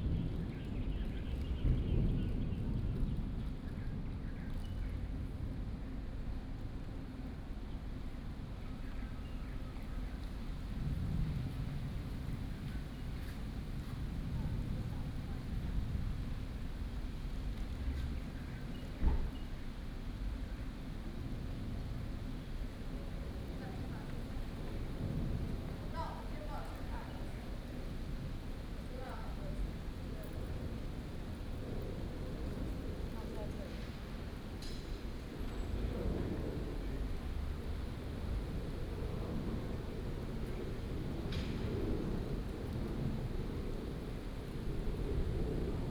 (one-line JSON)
{"title": "Ln., Sec., Academia Rd., Nangang Dist., Taipei City - Outside the museum", "date": "2017-04-26 13:02:00", "description": "Outside the museum, Thunder, The plane flew through", "latitude": "25.04", "longitude": "121.62", "altitude": "21", "timezone": "Asia/Taipei"}